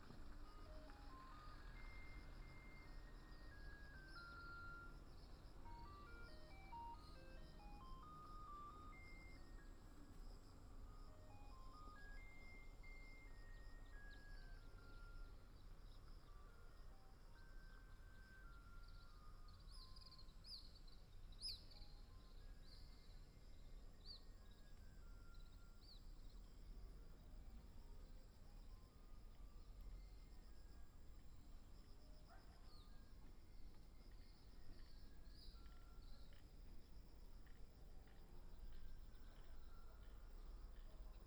大武鄉民生街, Taitung County - On the river bank
Construction sound, Bird sound, On the river bank, Train passing, Dog barking, Garbage truck arrives, traffic sound
Binaural recordings, Sony PCM D100+ Soundman OKM II
Taitung County, Taiwan